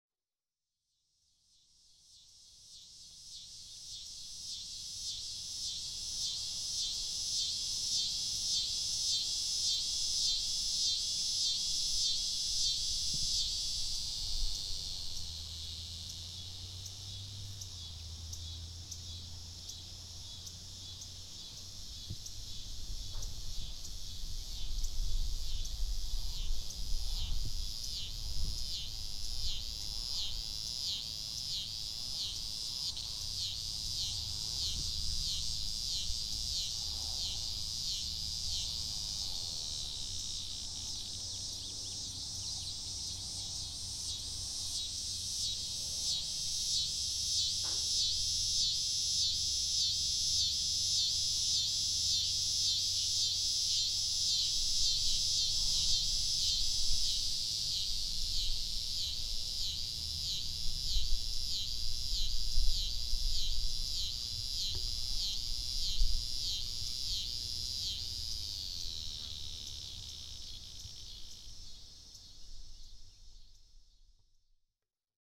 River Rd. near Linn Grove, IN, USA - Cicadas on the Wabash River, River Rd. near Linn Grove, IN, 46740, USA
Cicadas on the Wabash River, River Rd. near Linn Grove, IN. Recorded at an Arts in the Parks Soundscape workshop at Ouabache State Park, Bluffton, IN. Sponsored by the Indiana Arts Commission and the Indiana Department of Natural Resources.